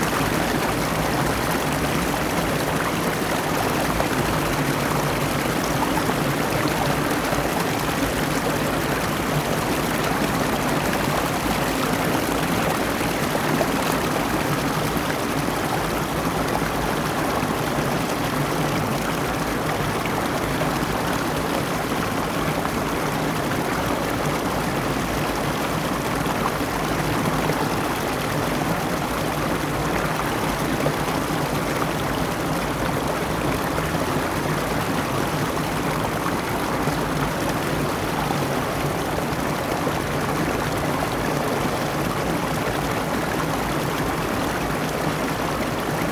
七腳川溪, Ji'an Township - The sound of water streams
The sound of water streams, The weather is very hot
Zoom H2n MS+ XY
Ji-an Township, 慶豐十一街294巷16號